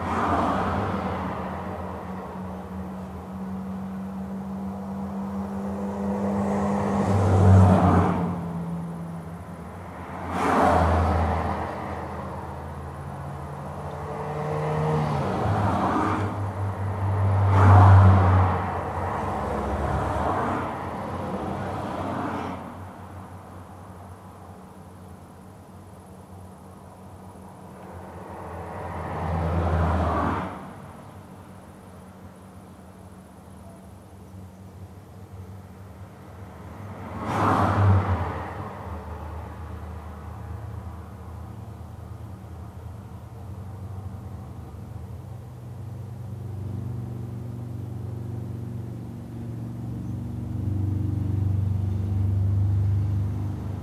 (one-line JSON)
{"title": "Pl. de la Gare, Saint-Avre, France - Cars and trains", "date": "1999-07-18 10:24:00", "description": "Minidisc recording from 1999.\nTech Note : Sony ECM-MS907 -> Minidisc recording.", "latitude": "45.35", "longitude": "6.30", "altitude": "452", "timezone": "Europe/Paris"}